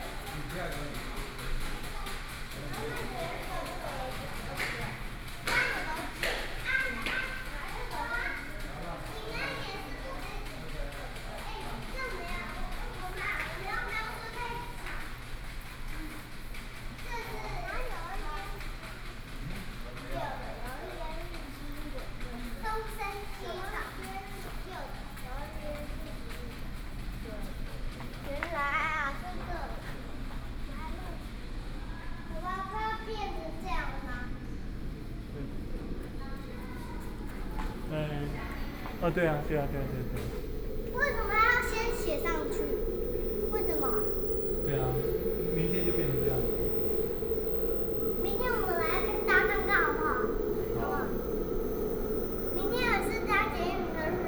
Jing'an Station, New taipei City - Soundwalk
from Hall MRT to MRT platform, Waiting for the train, Sony PCM D50 + Soundman OKM II
板橋區 (Banqiao), 新北市 (New Taipei City), 中華民國